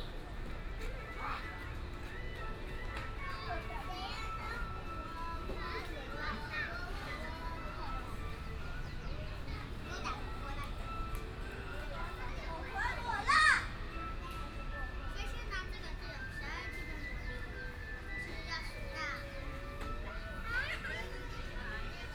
新瓦屋客家文化保存區, Zhubei City - Taiwan traditional building preservation area
Taiwan traditional building preservation area, Traffic sound, sound of the birds, Child
Hsinchu County, Zhubei City, 復興六路13號